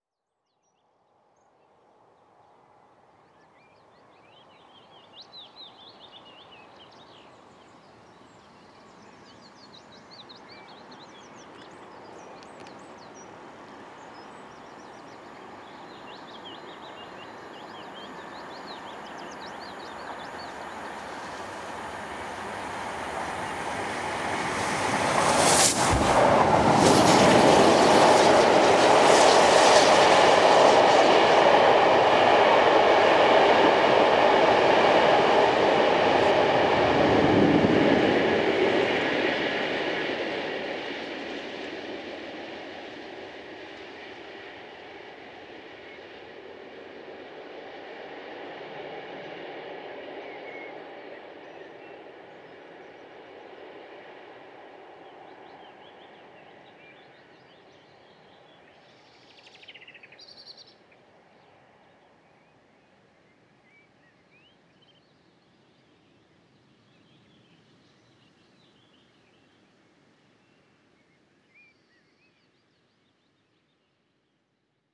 Two carriage diesel train heading down from Kirkby Stephen station towards Appleby. Fredorded with two mics for the footbridge. Pearl MS-8, Teninga parabolic, SD Mix Pre 10t. Part of a set of sounds recorded and mixed by Dan Fox into a sound mosaic of the Westmorland Dales.
North West England, England, United Kingdom, 17 May 2022, 11:19